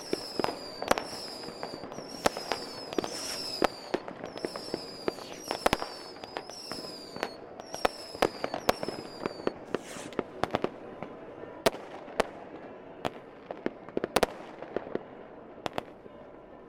Moabit, Berlin, Germany - Silvester 2012
The bridge was filled with people who gathered together to start their firework to greet the new year 2013. To protect the recording device 'H1' from wind and very loud explosions i had to wrap it in felt. So, sound my be damped a little (more).
31 December 2012, 23:57